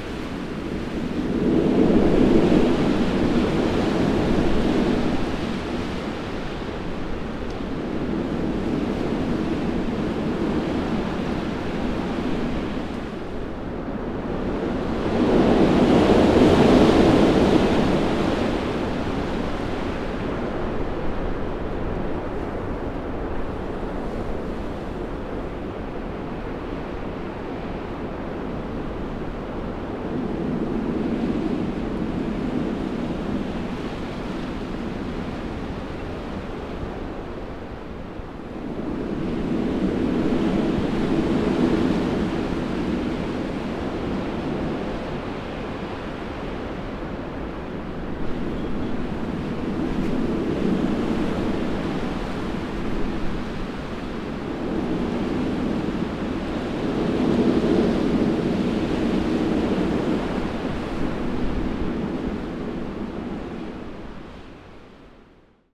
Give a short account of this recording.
A bit of wind noise would be an understatement. Got a bit nervous standing out in that, the locals must think I'm nuts!